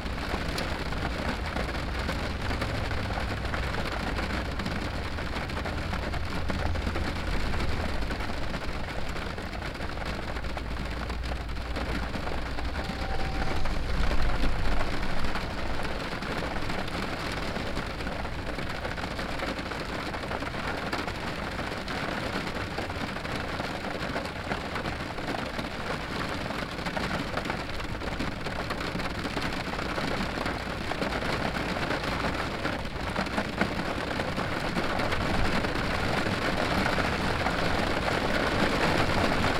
St Pierre le Moûtier, N7, Rain and thunderstorm
France, rain, thunderstorm, car, road traffic, binaural
France, 20 May 2011